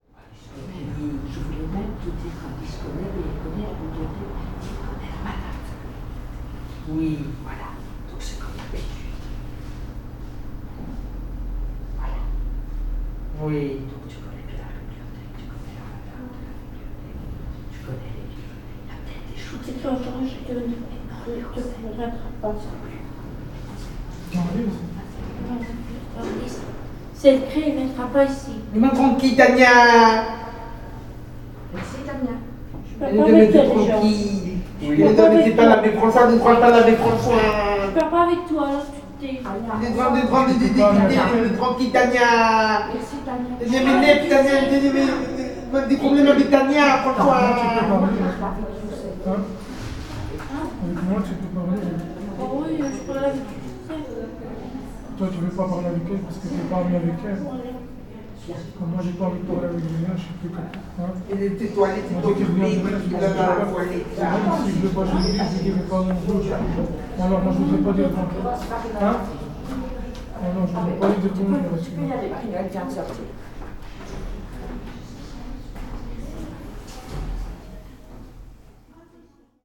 Brussels, Rue de Rome, at the library